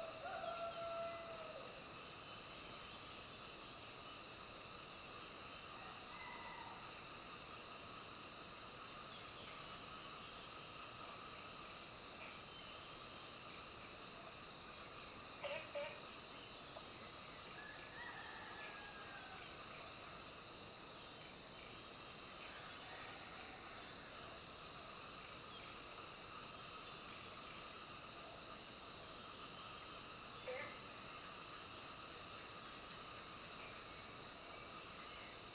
Green House Hostel, 桃米里 - Crowing sounds
Frogs chirping, Early morning, Crowing sounds
Zoom H2n MS+XY
Puli Township, Nantou County, Taiwan, 11 June 2015, ~05:00